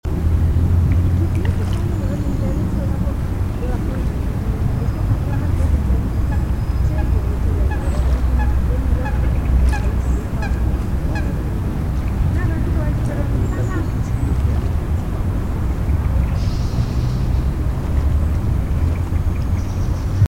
Grote Dobbe, Zoetermeer
Water and bird sounds during sound walk
Zoetermeer, The Netherlands, 13 October 2010, ~17:00